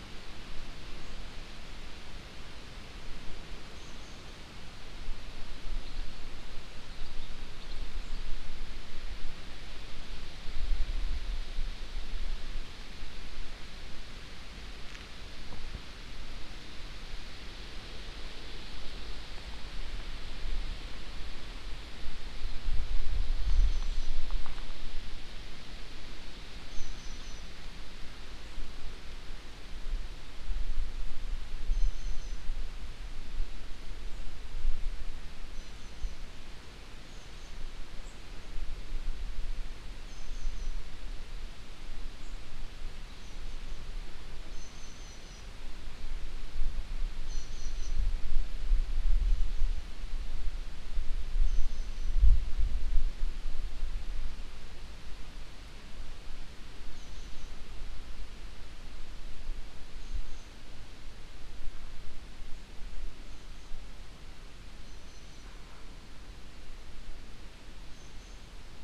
Aukštadvario seniūnija, Litauen - Lithuania, countryside, devils hole
In the centre of a small but quite deep round valley entitled "devil's hole" that is surrounded by trees. The quiet sounds of leaves in the wind waves, insects and birds resonating in the somehow magical circle form.
international sound ambiences - topographic field recordings and social ambiences
5 July 2015, 19:20